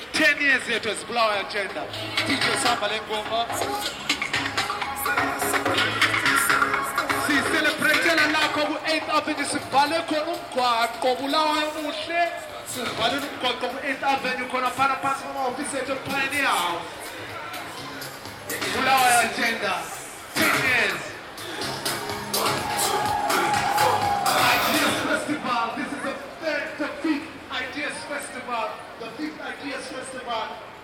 The bin-aural soundscape recording was made on 8th Ave in Bulawayo on the occasion of a celebratory procession of the Radio Dialogue community in the inner city for the 10th anniversary of the conversational circle Bulawayo Agendas and the launch of the Ideas Festival in the city.